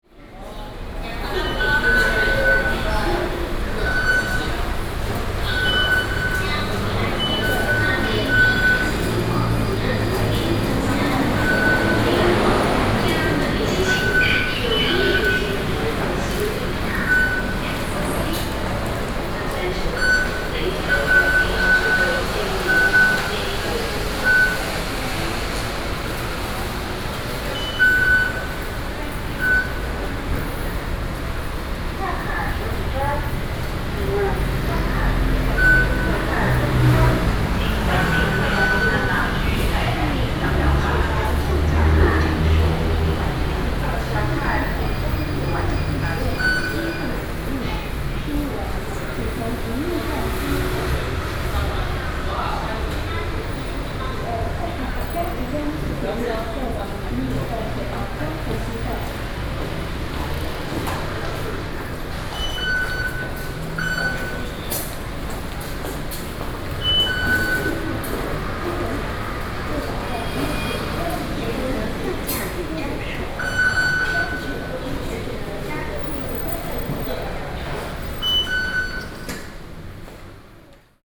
in the Xindian District Office Station Hall, Zoom H4n+ Soundman OKM II
Xindian District, 捷運新店區公所站(北新), 28 June